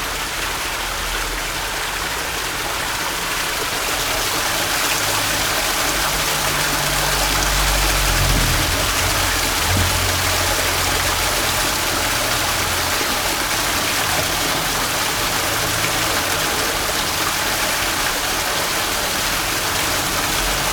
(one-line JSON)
{"title": "Beitou, Taipei - The sound of water", "date": "2012-07-01 18:44:00", "latitude": "25.13", "longitude": "121.47", "altitude": "14", "timezone": "Asia/Taipei"}